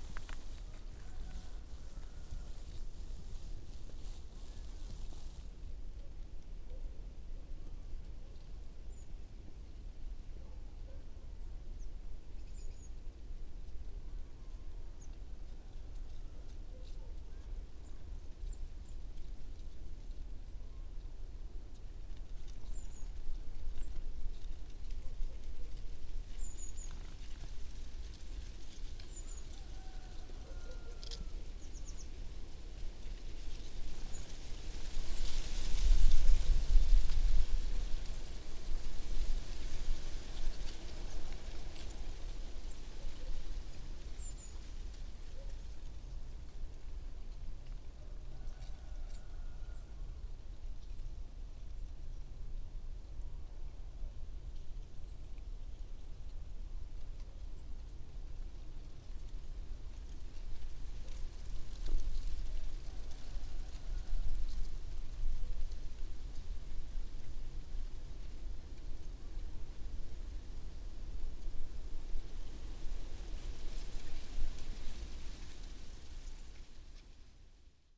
quarry, Marušići, Croatia - void voices - oak grove
oak grove, branches with dry leaves, wind, rooster
28 December 2012, 15:04